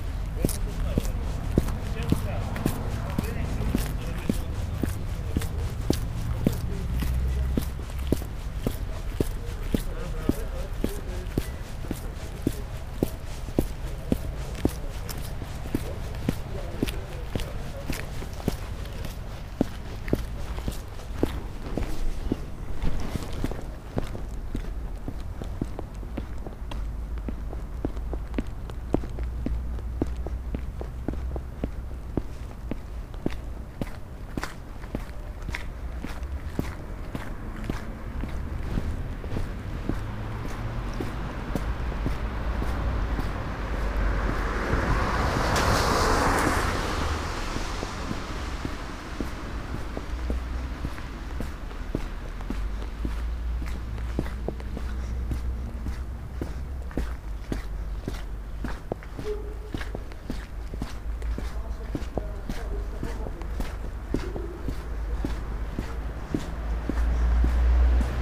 Olsztyn, Polska - Zatorze - sound walk
Sound walk. Winter. Snow is already melted. Microphones hidden in clothing.